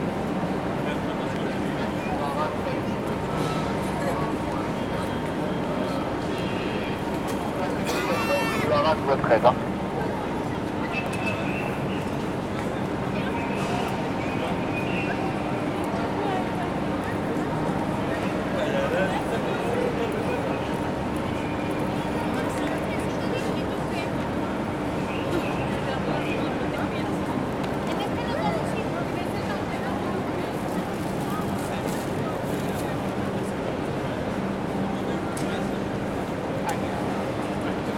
St Vincent de Paul, Paris, France - Inside Gare Du Nord, Paris.
Gare Du Nord, Paris.
Zoom H4N
5 August 2016